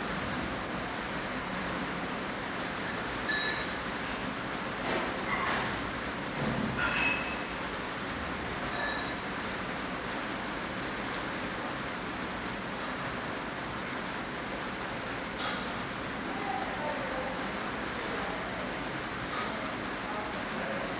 Villa Arson, Avenue Stephen Liegeard, Nice, France - Heavy rain on skylight in Villa Arson
Rain on the skylight next to Villa Arson's Internat kitchen where you can hear some people cooking.
Il pleut comme les vaches qui pissent sur le fenêtre de l'Internat de Villa Arson, à côté du cuisine où les gens font leur repas.